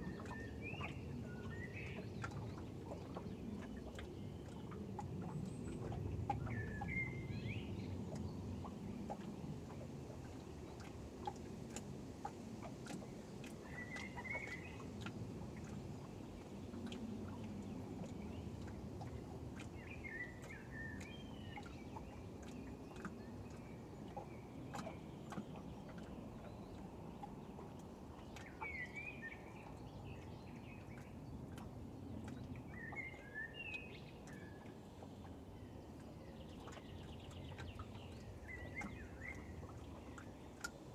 small microphones hidden between the boards of the bridge - to hide from strong wind